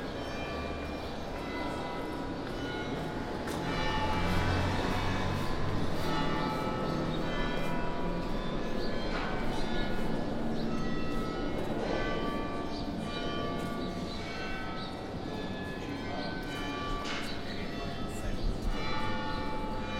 ambiance pendant le tournage de pigalle la nuit
Paris, France